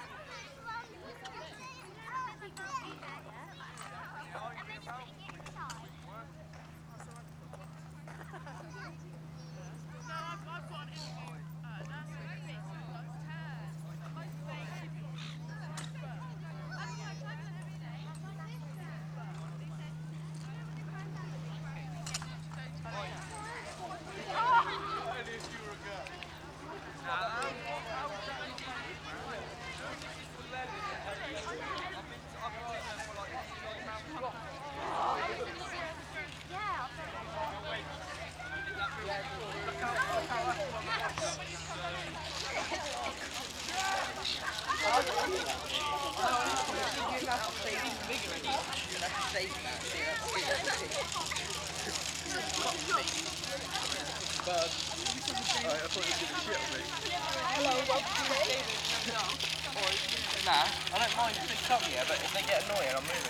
Sandbanks Beach, Dorset - People passing on the prominade, Sandbanks.
Late March, sunny weather on the beach. Recorded on a Fostex FR-2LE Field Memory Recorder using a Audio Technica AT815ST and Rycote Softie
UK, 30 March 2012